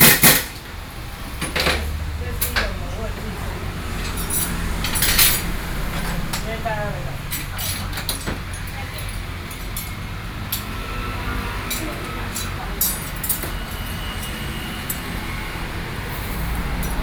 Beitou, Taipei - Motorcycle repair shop